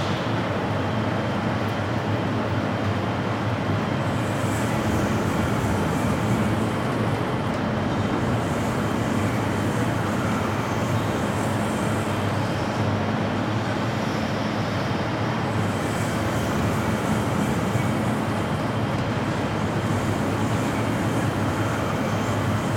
opening and closing of doors in the shopping center foyer, Aporee workshop
Germany, 2 February